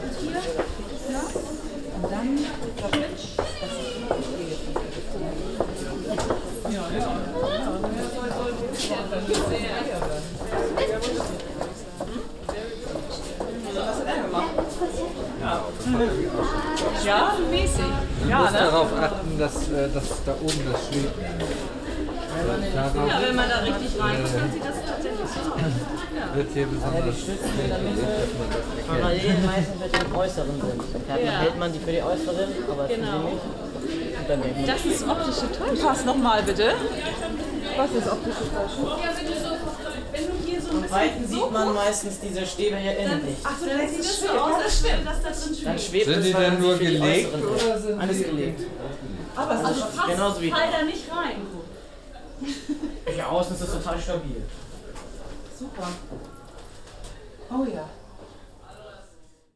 Ausstellung der Schülergruppe Gesamtschule Bahrenfeld mit 1500 Holzlatten.
Blintzelbar 13
Hamburg, Germany, 31 October, 15:00